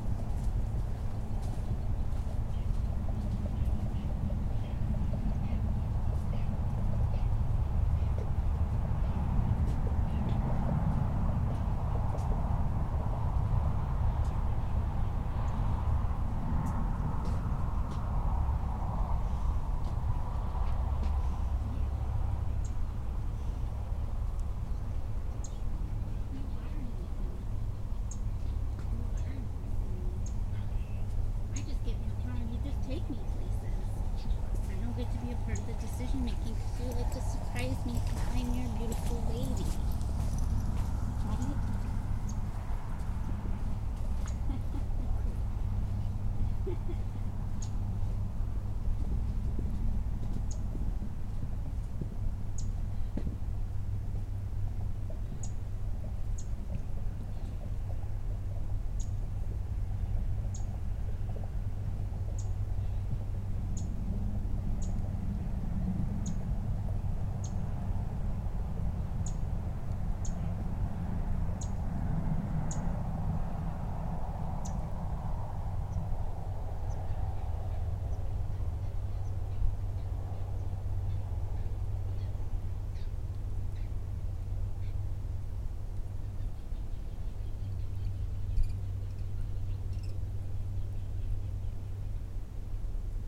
Marsh Boardwalk, St Helena Island, SC, USA - Marsh Boardwalk Trail
A recording made to the side of a boardwalk trail that passes over a marsh. Cars can be heard passing over the bridge to Fripp Island (Tarpon Blvd.) to the left, and multiple people pass by the recording location on the right. The ambience is quiet, with most sounds being quite distant.
[Tascam DR-100mkiii & Primo EM-272 omni mics]
26 December 2021, 16:57